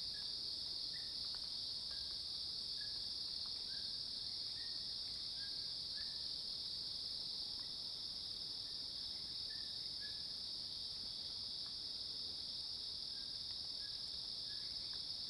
Hualong Ln., Yuchi Township, Nantou County - In the woods
Insects called, Birds call, Cicadas cries, Dog barking
Zoom H2n MS+XY
19 September 2016, ~06:00